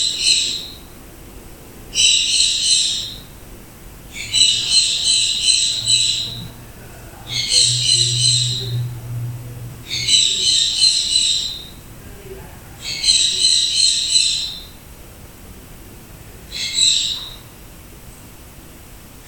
1395 Grantham Dr - Room sound
During the day it's mostly the sounds of the ac occasionally turning on and off, and a car can be heard more frequently during the weekdays, the noises of the birds drown out the silence in the mornings and afternoons.
Illinois, United States, 13 October, 10:17